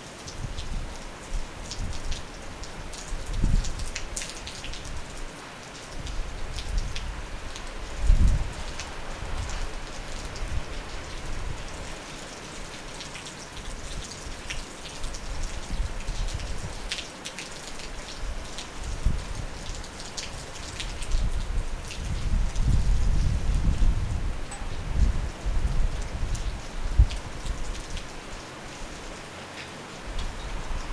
{"title": "Rúa Cansadoura, Nigrán, Pontevedra, Spain - Elsa storm", "date": "2019-12-22 01:38:00", "description": "heavy rain and thunderstorm by Elsa", "latitude": "42.15", "longitude": "-8.83", "altitude": "4", "timezone": "Europe/Madrid"}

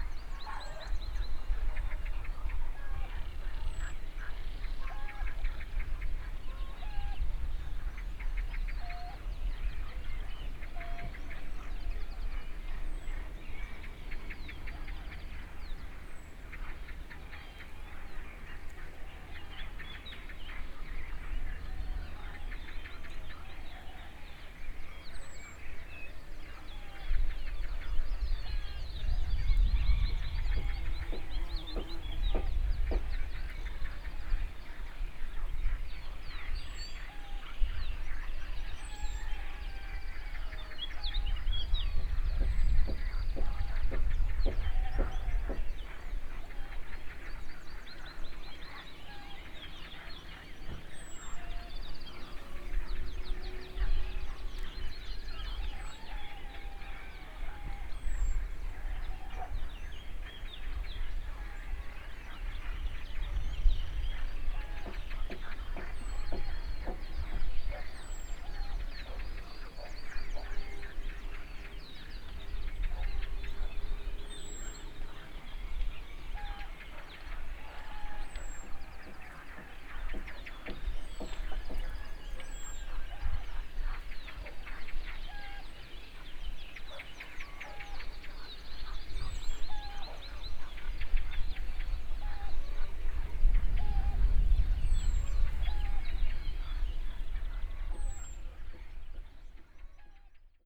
{"title": "Levada do Norte - break on Levada do Norte", "date": "2015-05-02 15:19:00", "description": "(binaural) rich, peaceful ambience of a vast valley west from Funchal, overlooking Campanario.", "latitude": "32.68", "longitude": "-17.02", "altitude": "532", "timezone": "Atlantic/Madeira"}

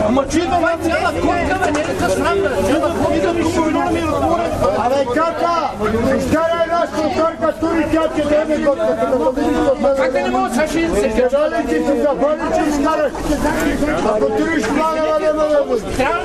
{"title": "Sofia, Women´s Market, Story of a Cat - Women´s Market I", "date": "2012-10-05 19:07:00", "latitude": "42.70", "longitude": "23.32", "altitude": "543", "timezone": "Europe/Sofia"}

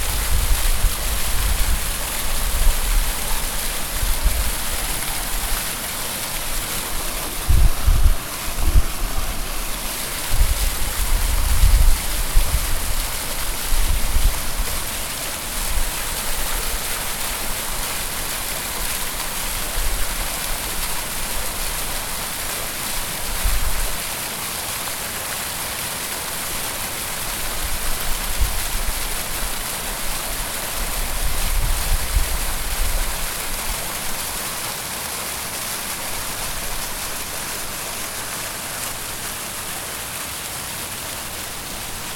{"title": "E North Water St, Chicago, IL, USA - Piooner Fountain", "date": "2017-10-02 17:09:00", "description": "Pioneer fountain recording for Eco Design 2017", "latitude": "41.89", "longitude": "-87.62", "altitude": "181", "timezone": "America/Chicago"}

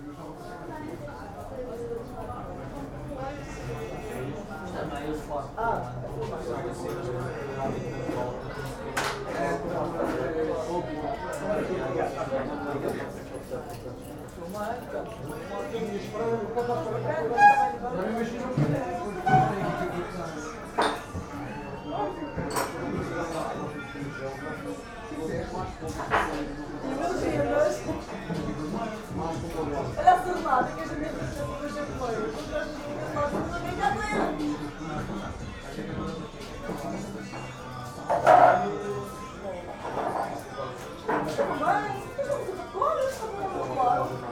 {"title": "Funchal, Venda Velha - regional punch", "date": "2015-06-04 23:04:00", "description": "mellow atmosphere at venda velha bar.", "latitude": "32.65", "longitude": "-16.90", "altitude": "11", "timezone": "Atlantic/Madeira"}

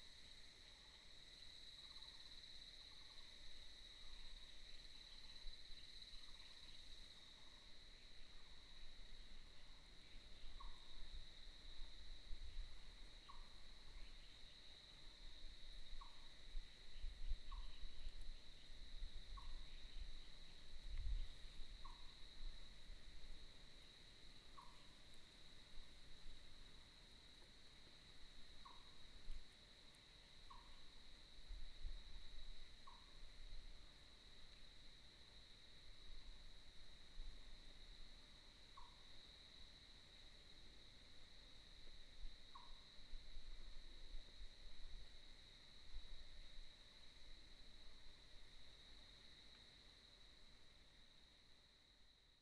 {"title": "199縣道內文, Shizi Township - Next to the woods", "date": "2018-04-23 12:36:00", "description": "Next to the woods, Birds sound, The sound of cicadas", "latitude": "22.23", "longitude": "120.87", "altitude": "351", "timezone": "Asia/Taipei"}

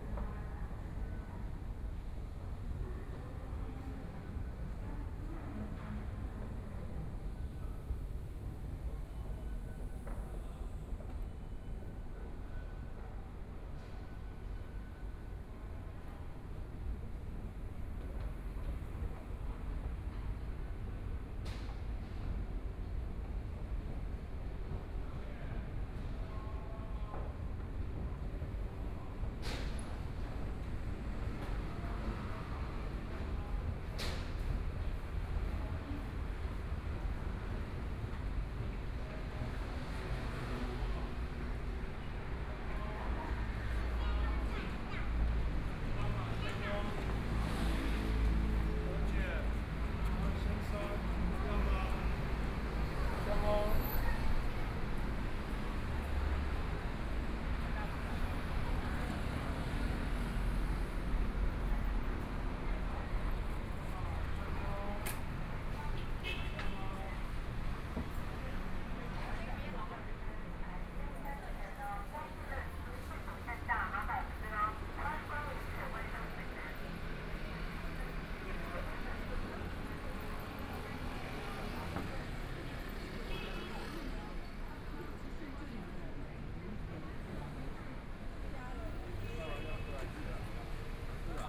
{"title": "Jinzhou St., Zhongshan Dist. - Soundwalk", "date": "2014-02-15 13:45:00", "description": "Walk from the MRT station to start, Walking on the street, Various shops voices, Traffic Sound, Binaural recordings, Zoom H4n+ Soundman OKM II", "latitude": "25.06", "longitude": "121.53", "timezone": "Asia/Taipei"}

